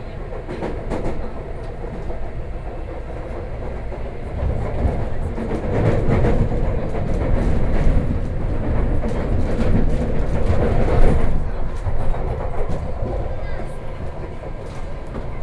北投 復興崗, Taipei City - Take the MRT